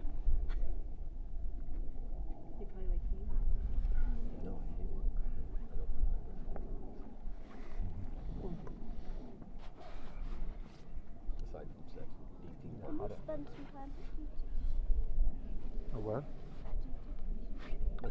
neoscenes: at the top of Greys
Colorado, USA, 11 September 2011, ~14:00